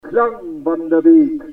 Also to be found on the Hoscheid Klangwanderweg - sentier sonore, is this sound object by Michael Bradke entitled Wald Telefon.
Its a plastic tube construction in between the trees, that can be called in. Here recording no. 02
Dieses Klangobjekt von Michael Bradke mit dem Titel Waldtelefon ist auf dem Klangwanderweg von Hoscheid. Es ist eine Röhrenkonstruktion zwischen den Bäumen, in die man hineinrufen kann. Hier ist die Aufnahme Nr. 2.
Cet objet acoustique de Michael Bradke intitulé le Téléphone Sylvestre, peut aussi être rencontré sur le Sentier Sonore de Hoscheid. Il s’agit d’une construction en tube de plastique entre les arbres qui permet de s’envoyer des messages. Voici l’enregistrement n°2
Projekt - Klangraum Our - topographic field recordings, sound objects and social ambiences
Hoscheid, Luxembourg, 5 June, 19:31